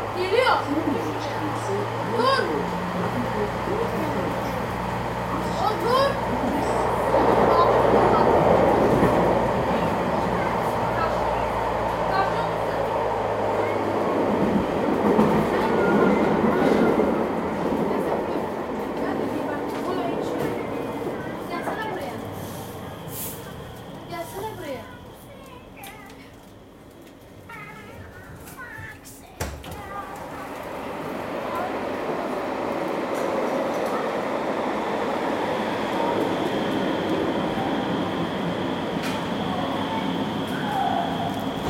Subway ride from station Hans-Böckler-Platz to station Venloer Str./Gürtel
Tram ride from station Eifelstrasse to station Rudolfplatz. Tram changes to subway after 2 station.
Recorded july 4th, 2008.
project: "hasenbrot - a private sound diary"
Cologne, Germany